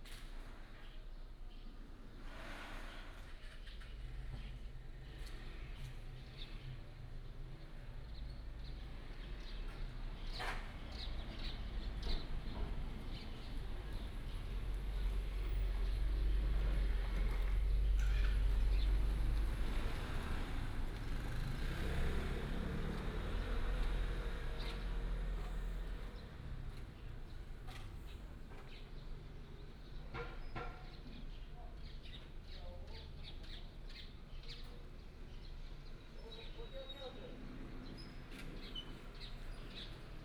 大鳥247, Daniao, Dawu Township - Morning in the tribe
Morning in the tribe, Tribal Message Broadcast, birds sound, traffic sound
Taitung County, Dawu Township, 大鳥聯外道路, 24 April, 06:55